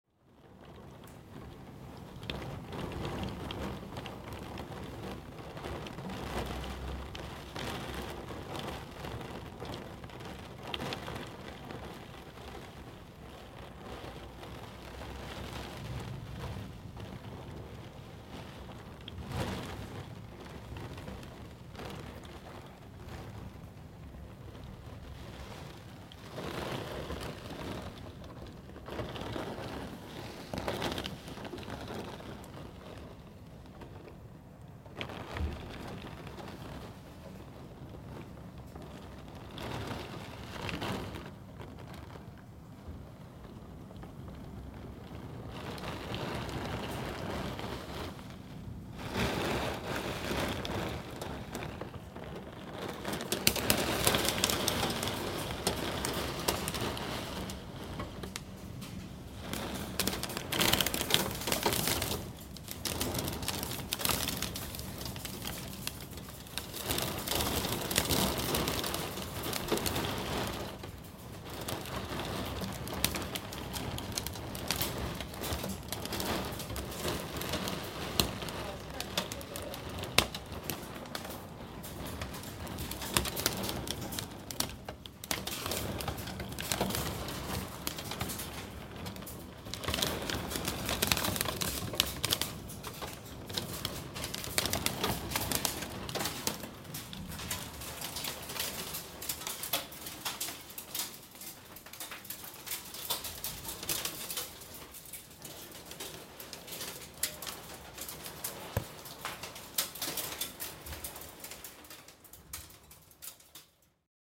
koeln, rain in cullis - koeln, heavy rain at window
heavy rain during a thunderstorm.
recorded june 22nd, 2008.
project: "hasenbrot - a private sound diary"